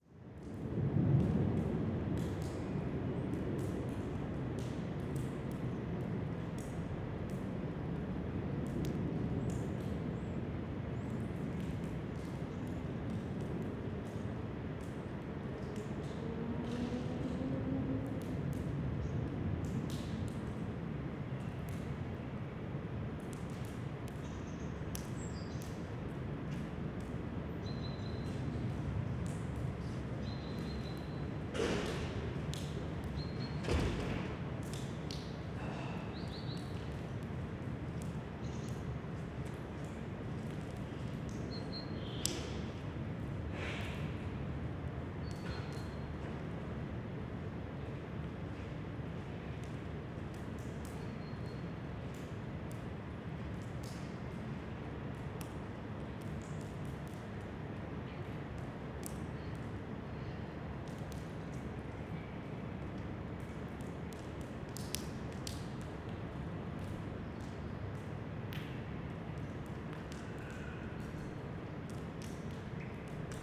Tallinn Linnahall
melting snow, water dropping from ceiling at Linnahall, the more and more abandoned former town hall of Tallinn